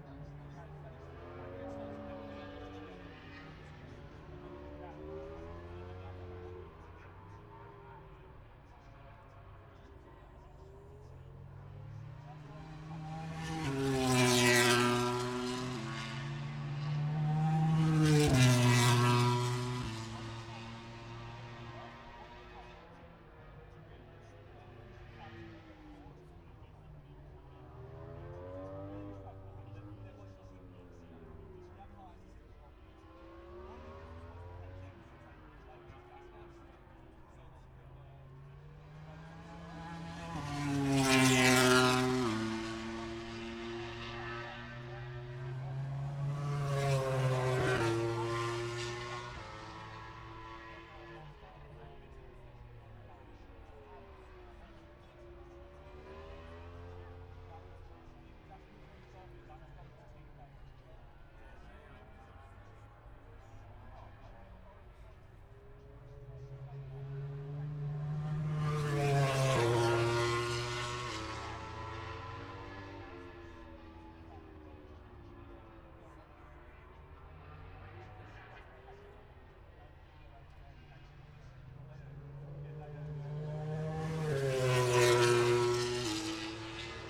Towcester, UK - british motorcycle grand prix 2022 ... moto grand prix ...
british motorcycle grand prix 2022 ... moto grand prix first practice ... dpa 4060s on t bar on tripod to zoom f6 ...